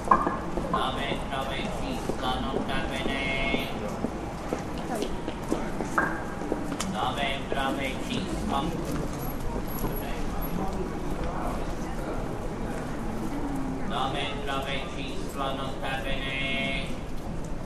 Bratislava I Slovenská republika - vendor of bratislava's big issue 'nota bene'
Actually this guy used to be a well known street vendor of all kinds of newspapers in Bratislava, well known especially for his characteristic chant.